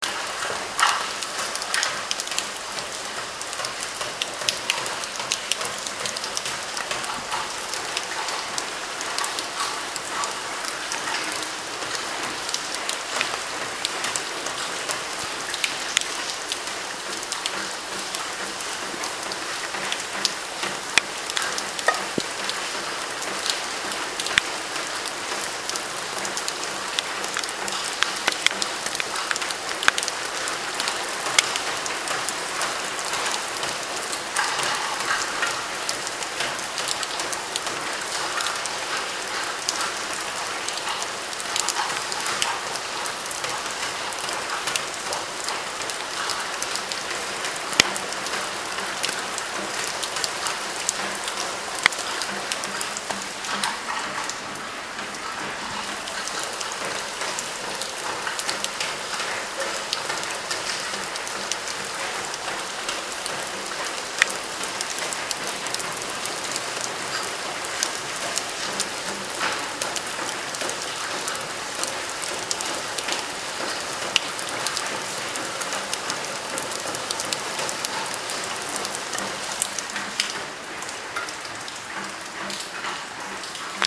Düstere Str., Göttingen, Germany - Sound of rain recorded at the hallway facing the bike yard
Recorder: SONY IC recorder ICD-PX333
Raindrops falling on suface of different materials, including cans, glass bottles, slates, etc.